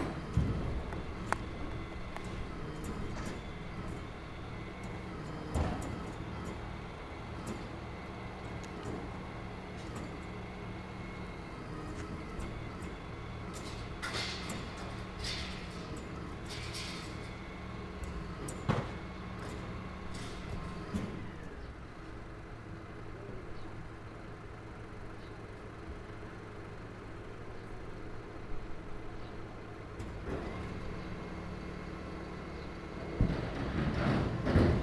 Skehacreggaun, Co. Limerick, Ireland - Mungret recycling centre
Listening to recycling #WLD2018